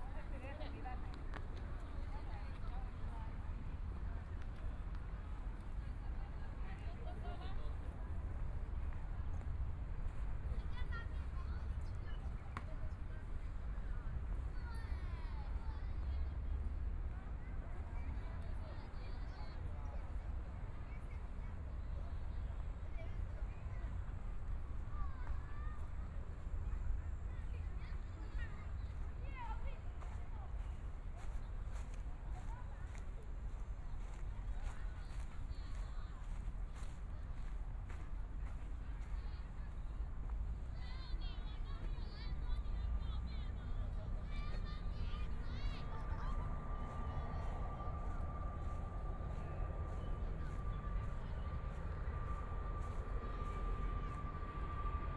Walking through the park, Traffic Sound, Aircraft flying through, Sunny afternoon
Please turn up the volume a little
Binaural recordings, Sony PCM D100 + Soundman OKM II
28 February 2014, 17:58